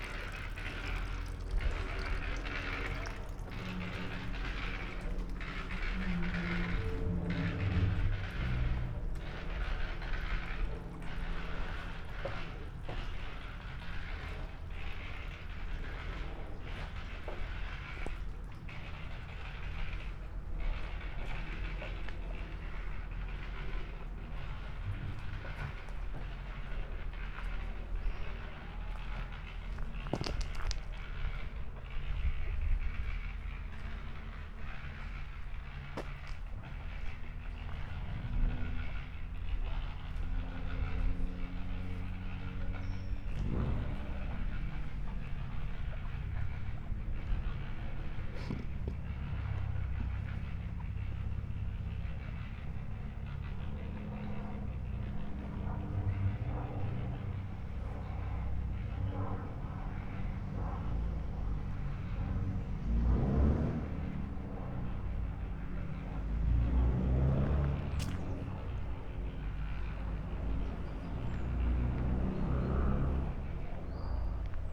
Mostecká, Mariánské Radčice, Tschechien - village walk
strolling around in Mariánské Radčice village (Sony PCM D50, Primo EM172)